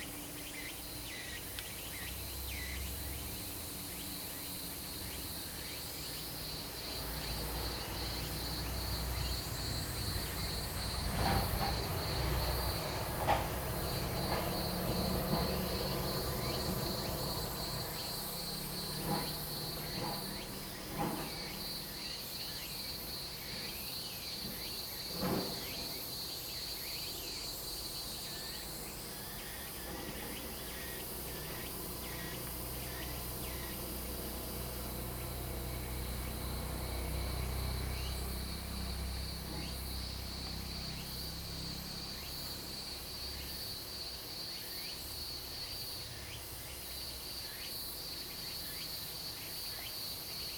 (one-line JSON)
{"title": "Woody House, 桃米里 Puli Township, Nantou County - Cicadas cry and Birds singing", "date": "2015-08-26 09:02:00", "description": "Cicadas cry, Birds singing\nZoom H2n MS+XY", "latitude": "23.94", "longitude": "120.92", "altitude": "495", "timezone": "Asia/Taipei"}